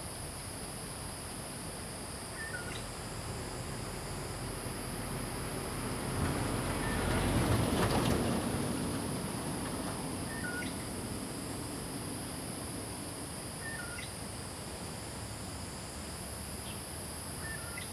Birds singing, Traffic Sound
Zoom H2n MS+XY
Nantou County, Puli Township, 桃米巷11-3號, 13 August 2015, 07:22